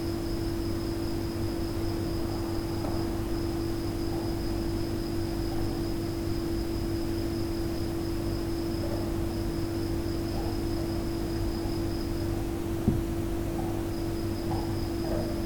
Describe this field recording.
Noche en La Pobla Llarga acompañada del sonido de un grillo. Los cantos de los grillos solo los hacen los machos y los producen frotando sus élitros (alas anteriores), por su sonido creo que es un grillo campestre [Gryllus Campestris]. Se capta también el sonido de un murciélago a partir del minuto 1:34 aproximadamente. También se escucha a un perrillo en una terraza que mueve algunos objetos que reverberan un poco en las paredes. Y como buena noche calurosa de verano... también se escuchan las tecnologías humanas; algún coche pasar y el motor de un aire acondicionado. Animales nocturnos en un paisaje sonoro de pueblecito humano.